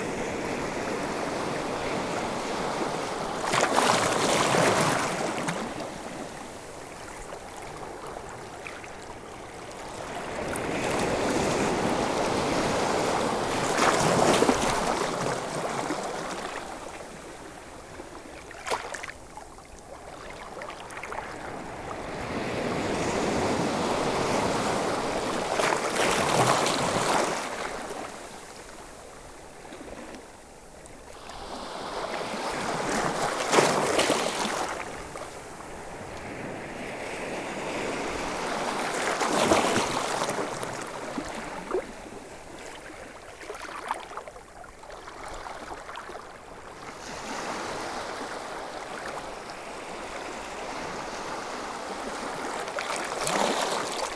Recording of the sea into a rocks breakwater.